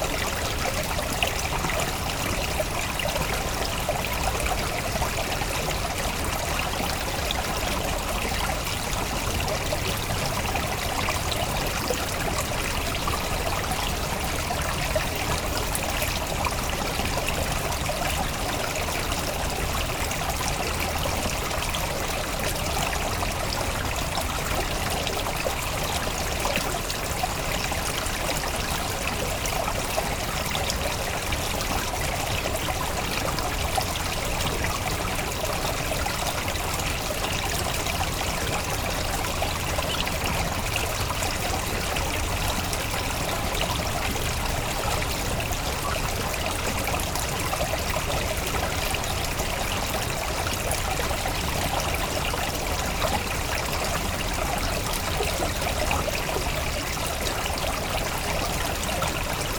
Notre-Dame-de-Bliquetuit, France - Small river
A small river is flowing from the pastures and to the Seine river.
17 September 2016